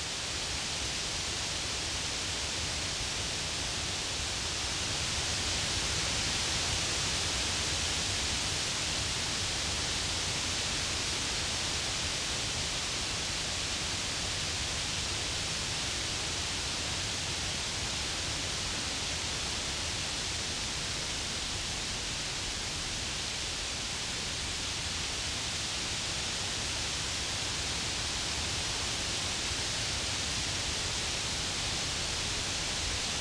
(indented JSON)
{
  "title": "Former rail line, Jindřichovice pod Smrkem, Czechia - wind in the forest trees",
  "date": "2017-08-06 15:00:00",
  "description": "A mixed forest offers a slightly varied 'white noise' sound in the wind. Tascam DR-100 with primos.",
  "latitude": "50.96",
  "longitude": "15.28",
  "altitude": "418",
  "timezone": "Europe/Prague"
}